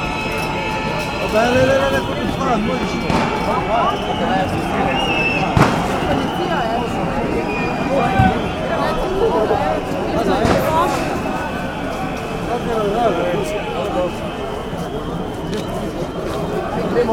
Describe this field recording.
demonstrations against corrupted authorities ... police chopper arrived at the end of recording, it is just before tear gas shower, police on horses and on the ground executed violence against people